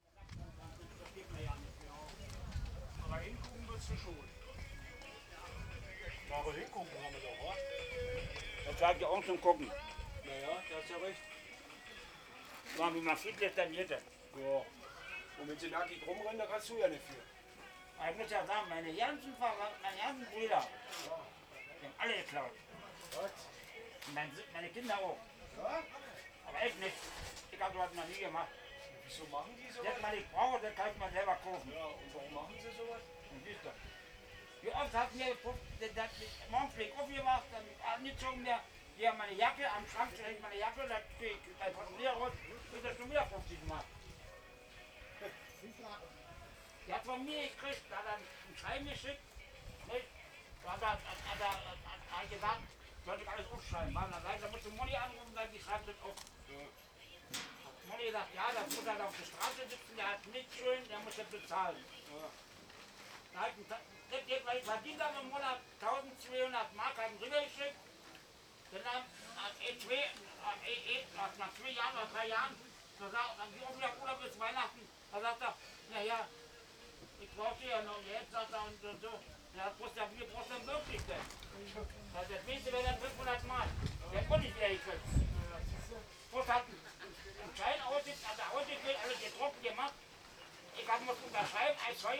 berlin, werbellinstraße: flohmarkt - the city, the country & me: flea market
an old man sits on a chair of a vender and explains him that all members of his family are thieves
the city, the country & me: august 22, 2010